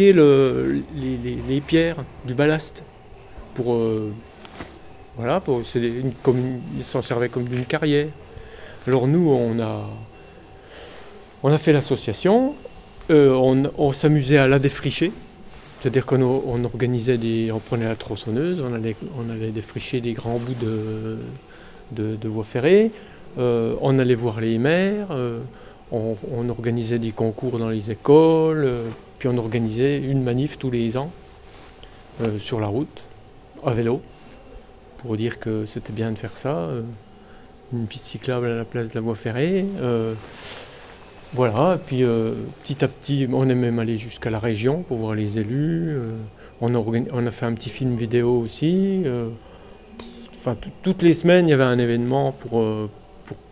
L'association BALLAST - Cornimont, France
L'association BALLAST, créée en 1993, a rendu possible, par de multiples actions, la transformation en Voie Verte de l'ancienne voie ferrée Remiremont-Cornimont dans la vallée de la Moselotte. Devenue réalité en 1999, cette Voie Verte est aujourd'hui gérée par un syndicat intercommunal
22 October, ~11:00